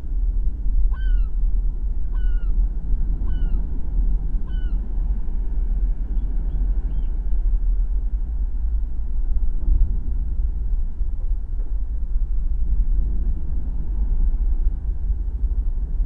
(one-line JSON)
{"title": "Middelkerke, Belgique - I can hear it monument", "date": "2018-11-16 15:20:00", "description": "Every year on the coast, there's an artistic festival called Beaufort. During this time in Westende, an artist made two gigantic metallic megaphones. Anyway you can see it on google aerial view. This work of art is called \"I can hear it\". I recorded the sea inside. The low-pitched sounds are extraordinarily amplified.", "latitude": "51.18", "longitude": "2.79", "altitude": "5", "timezone": "Europe/Brussels"}